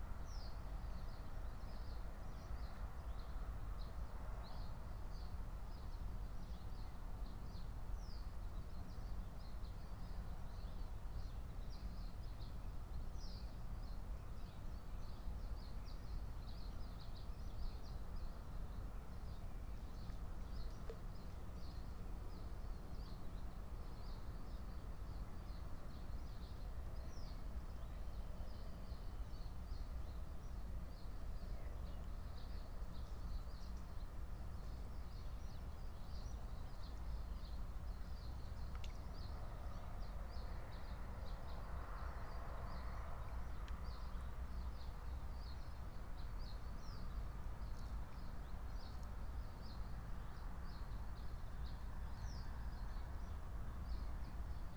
05:00 Berlin Buch, Lietzengraben - wetland ambience